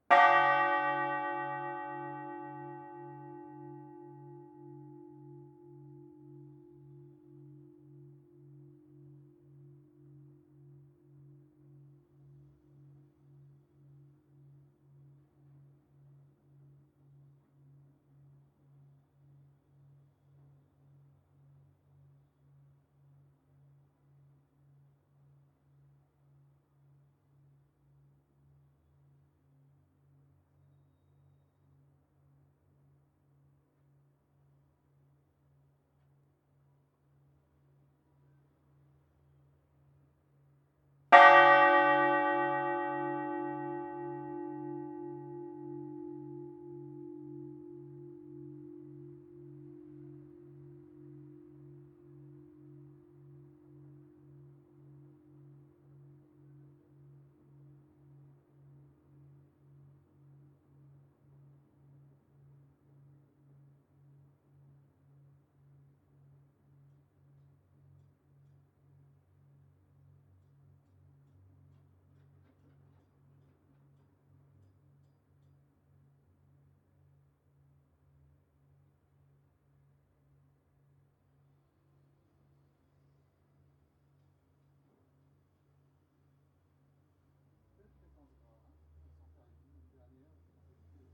Cloche de l'église de Fruges (Pas-de-Calais)
Le tintement.
France métropolitaine, France, 11 March 2019